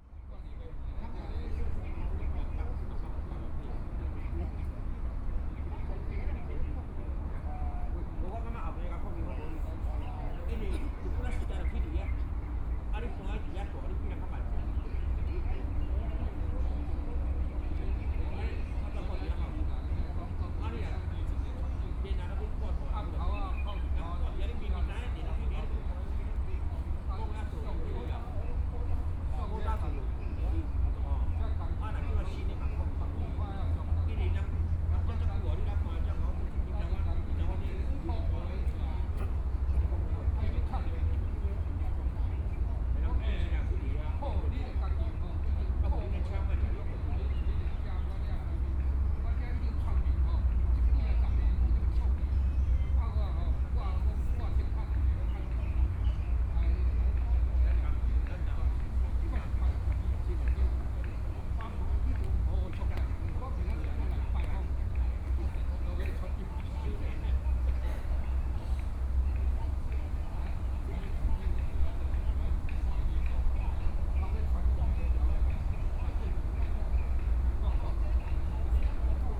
Sitting in the Park, Birds singing, Traffic Sound, A group of people chatting and rest